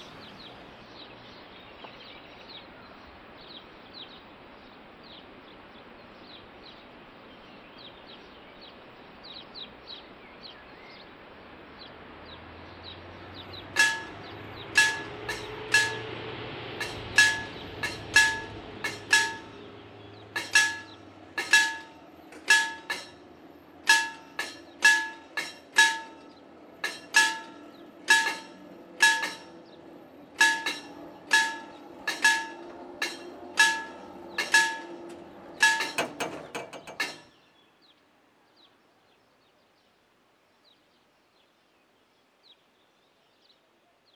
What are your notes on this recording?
The recording was made on the train line between Benevento and Avelino, a rail line that was shut down in October 2012.